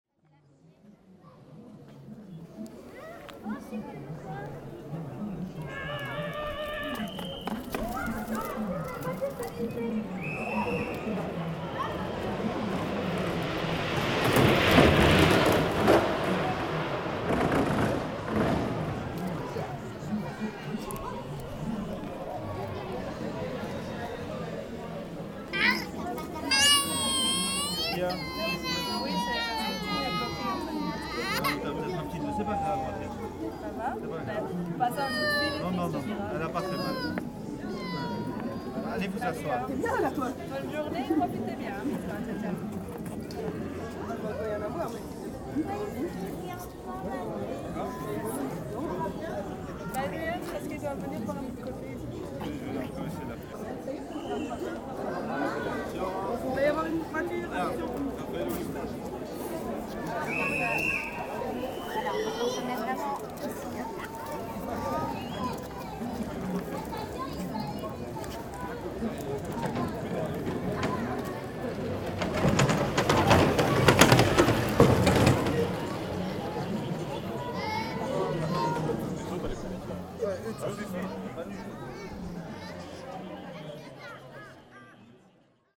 Mont-Saint-Guibert, Belgique - Soapbox race
A soapbox race in Mont-St-Guibert. A little girl is crying.